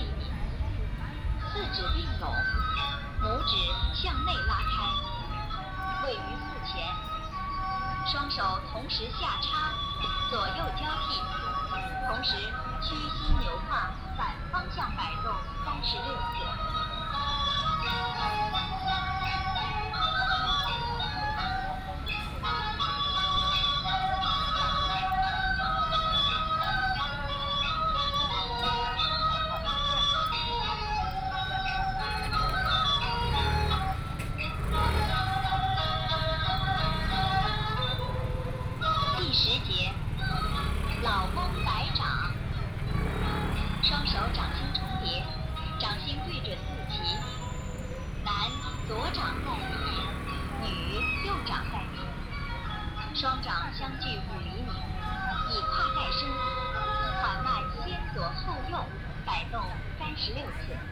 Zhongshan Park, Pingtung City - in the Park
Morning exercise in the park for the elderly